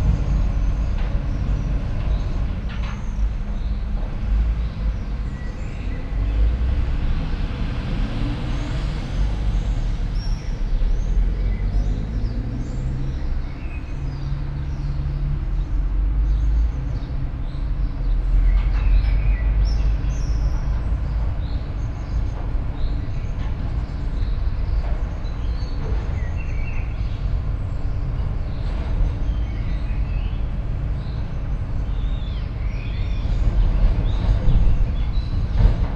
all the mornings of the ... - jun 4 2013 tuesday 06:59